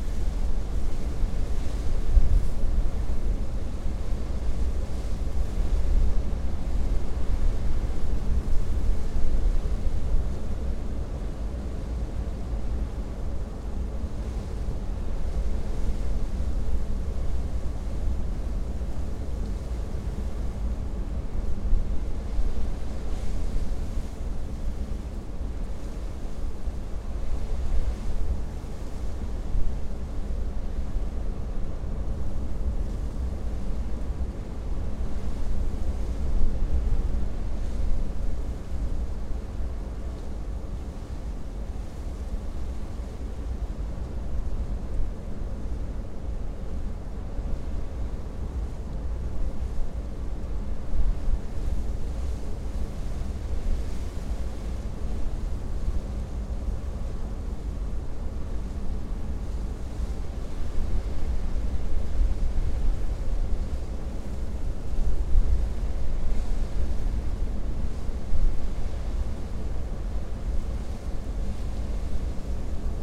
wind in fortifications, Smiltyne, Lithuania
windy day as heard from the remains of old german fortification
Klaipėda, Lithuania, 16 August 2016, ~14:00